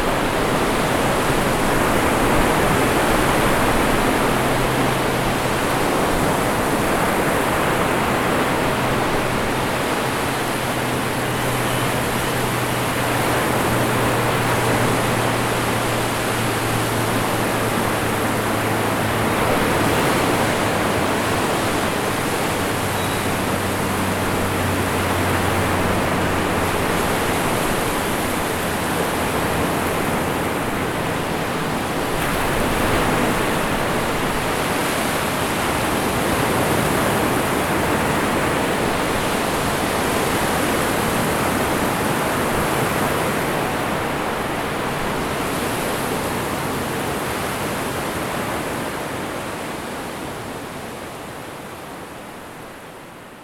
Unnamed Road, Scarborough, ON, Canada - Waves of Lake Ontario 4
Waves rolling onto beach.